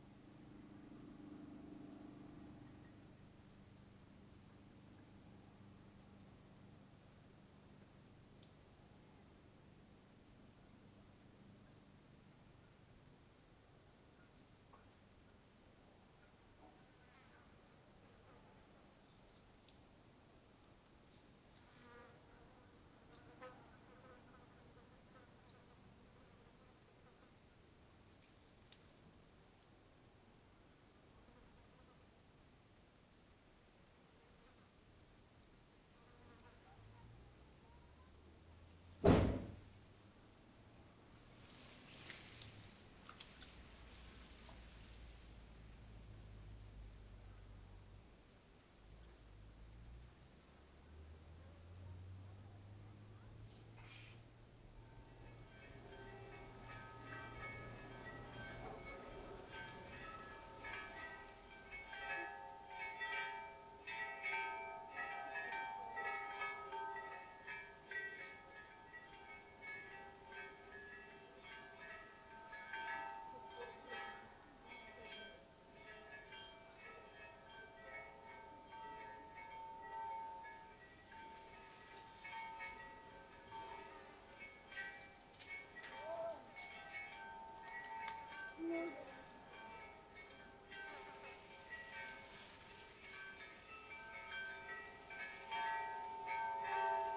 Frontera, Santa Cruz de Tenerife, España - Entre el cielo y la tierra
El Sitio is a rural and friendly accomodation with different small houses in a mountainside where I stay for few days in my fist visit to El Hierro. There i have a great time, a great view of El Golfo and a great sounscape that makes me feel like in sky… Birds, dogs barks, distant motorcycles, flys, dry leaves dragin along the ground… and the bells from a near church... Total relax.